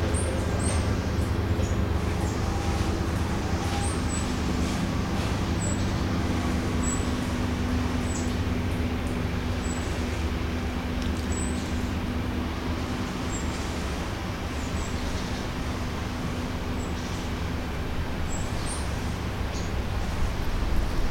see auf öffentlich begehbarem privatgrundstück zwischen friesen- und wasserstraße hinter der sportanlage, direkt an der kulturwerkstatt. eicheln fallen ins wasser, schritte, vogelstimmen, sogar eine hummel kurz am mikrophon, im hintergrund eine motorsäge und autos. und zwei nieser...